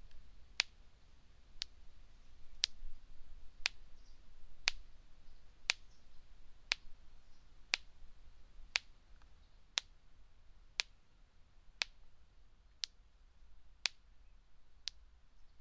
a small electrocution of a tree - KODAMA document
electric sparks between an electric fence and a tree in two different locations.
recorded during the KODAMA residency at La Pommerie September 2009.